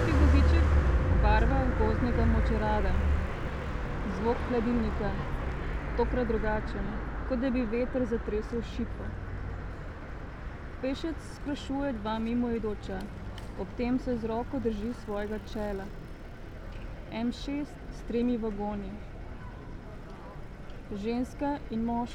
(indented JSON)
{
  "title": "cankarjeva cesta, ljubljana - street reading-fragment 2",
  "date": "2013-06-07 19:06:00",
  "description": "this sonorous fragment is part of Sitting by the window, on a white chair. Karl Liebknecht Straße 11, Berlin, collection of 18 \"on site\" textual fragments ... Ljubljana variation\nSecret listening to Eurydice 10, as part of Public reading 10",
  "latitude": "46.05",
  "longitude": "14.50",
  "altitude": "310",
  "timezone": "Europe/Ljubljana"
}